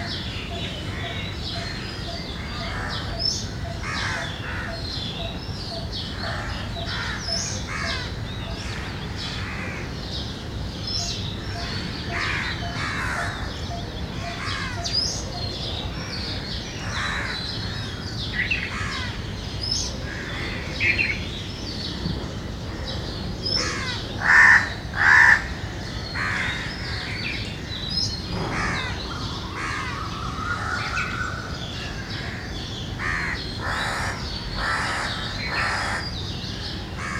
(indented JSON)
{
  "title": "Chittaranjan Colony, Kolkata, West Bengal, India - Early morning sounds from the roof of my flat, Kolkata",
  "date": "2018-04-15 05:30:00",
  "description": "The mic is placed on the roof of my flat. You hear lots of birds, mostly, crows, cuckoos, doves, sparrows etc., distant train horns, ac hum, and occasional traffic. Summers are normally busy from early mornings.",
  "latitude": "22.49",
  "longitude": "88.38",
  "altitude": "9",
  "timezone": "Asia/Kolkata"
}